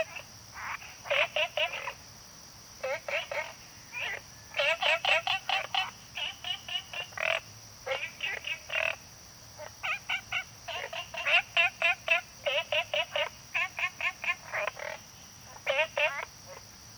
Taomi Ln., Puli Township - Frogs chirping
Frogs chirping, Ecological pool
Zoom H2n MS+XY